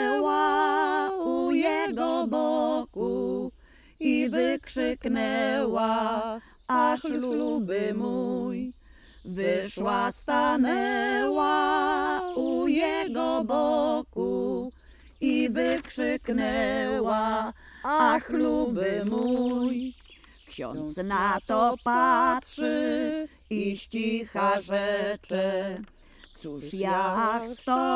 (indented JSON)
{
  "title": "Loryniec - Piosenka O jak mi teskno",
  "date": "2014-07-20 12:26:00",
  "description": "Piosenka nagrana w ramach projektu : \"Dźwiękohistorie. Badania nad pamięcią dźwiękową Kaszubów\".",
  "latitude": "54.05",
  "longitude": "17.89",
  "altitude": "138",
  "timezone": "Europe/Warsaw"
}